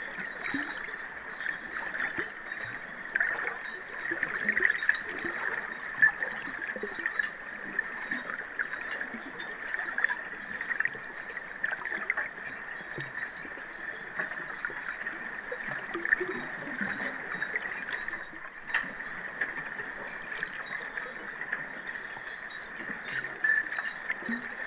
Varvsgatan, Härnösand, Sverige - Under water 2
The sound is recorded underwater at the quay on Skeppsbron in Härnösand. It's a strong wind. The sound is recorded with hydrophonic microphones.